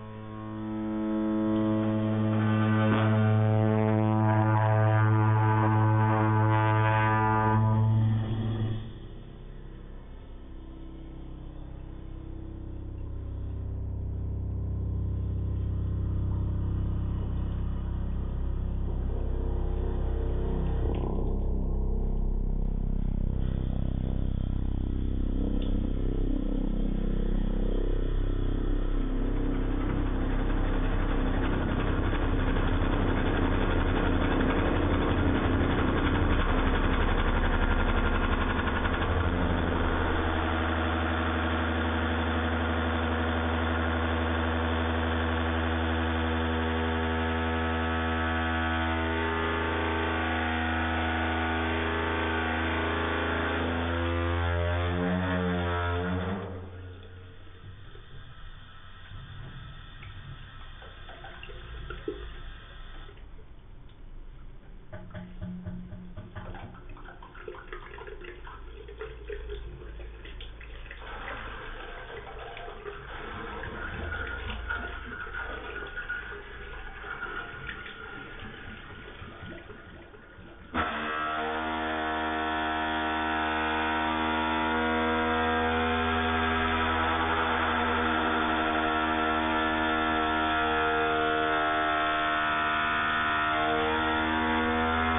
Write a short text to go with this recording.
Sound of the water heater Mora in at Resslova 1. It makes these sounds always when there are some visitors - freaking them out with its merciless roar.